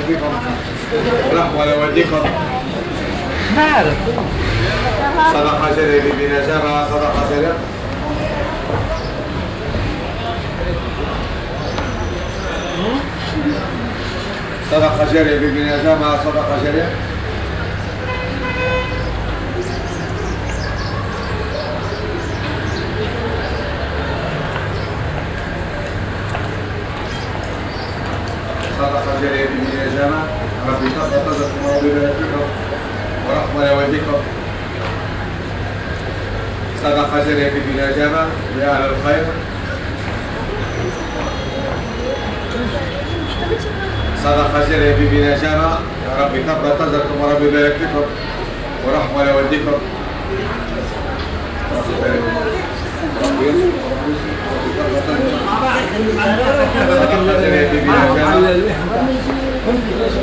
Le Passage, Tunis, Tunesien - tunis, ticket seller
At the entrance of the shopping mall. The sound of the voice of a ticket seller.
international city scapes - social ambiences and topographic field recordings
2 May, ~18:00